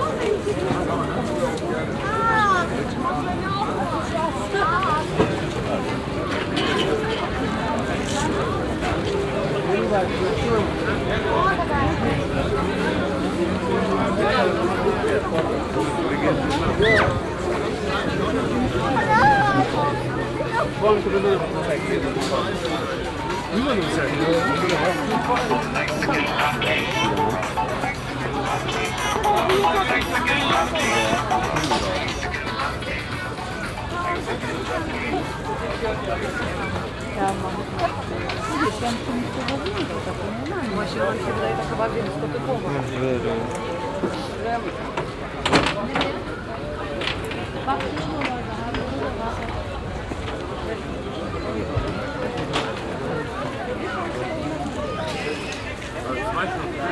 Nordviertel, Essen, Deutschland - essen, unversity, saturday fleemarket
Auf dem Universitätsgelände während des samstäglichen Flohmarkts. Verkäuferstimmen, Standmusik und die Klänge vieler Stimmen und Sprachen.
At the university during the weekly saturday fleemarket. The sounds of many voices in several languages.
Projekt - Stadtklang//: Hörorte - topographic field recordings and social ambiences
Essen, Germany, 19 April